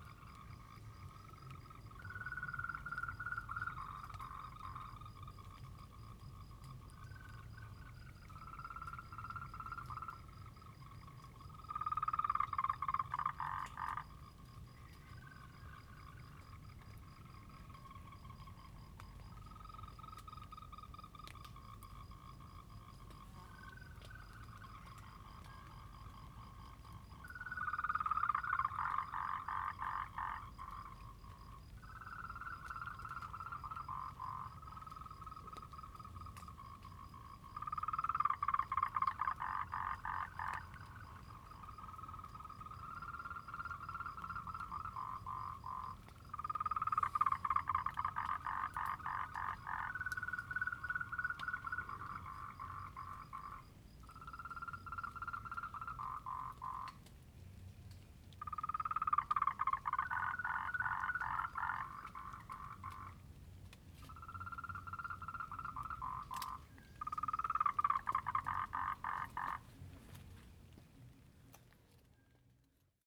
水上巷, 南投縣埔里鎮桃米里 - Frogs chirping
Prior to the recording and live sound of frogs
Zoom H2n MS+XY